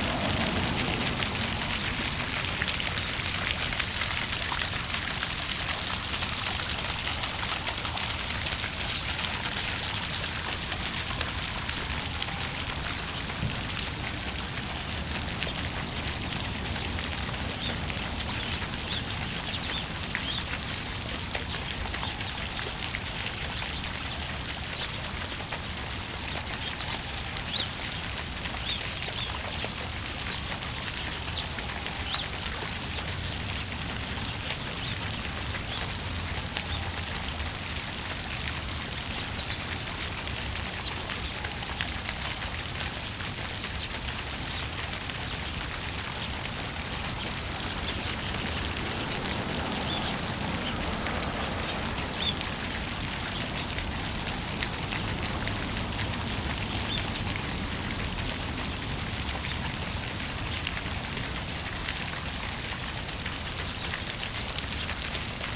Park Avenue Fountain, Baltimore, MD, USA - Fountain and Birds
Recorded with onboard Zoom H4n microphones. The sound of one of the Park avenue fountains as well as some birds from a bird feeder nearby.